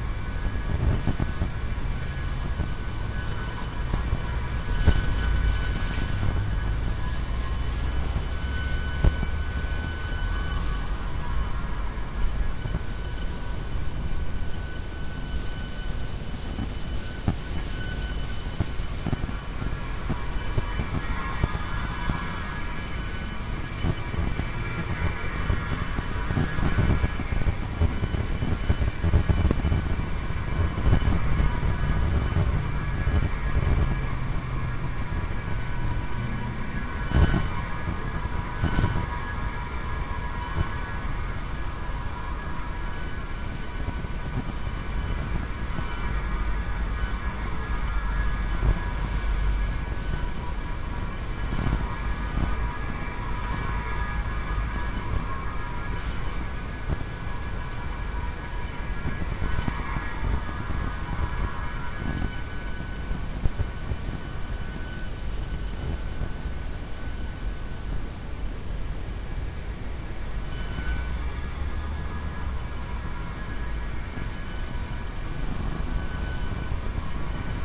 Binckhorstlaan, Den haag
Flag pole, contact microphones